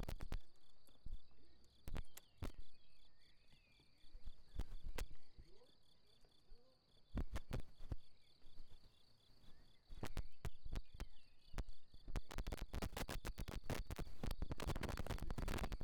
Près d'une prairie les grillons s'en donne à coeur joie, rencontre de propriétaires de chevaux .